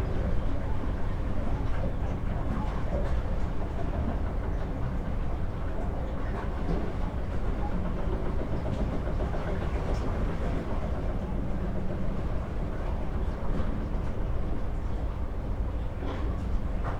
Klaipėda, Lithuania, on a pier - Klaipėda, Lithuania, on a pier's stones
5 May 2016, ~18:00